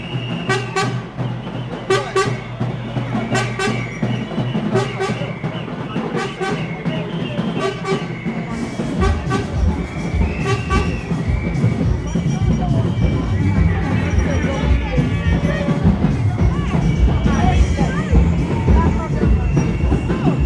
The Yaa, Chippenham Mews, London, UK - Preparing for Mass...
… we are in a court yard at Notting Hill Gate… it’s Carnival Monday…! The place here is home of Yaa Asentewaa Arts Club… I’ve been here for hours… witnessing with growing excitement what I remember from Rio de Janeiro as “concentracao”… the “moment” just before the march… (that “moment” can take hours… and indeed the build-up of “concentracao” takes weeks and months…!)… so here just the last 14 odd minutes…
… it’s a special one though… listen, the order of characters and groups tells a history…”condensed”…
(…I’m linking these recordings to the map… 9 years past… in honour of Carnival and, of Claudia Jones, the “mother of Carnival” …!)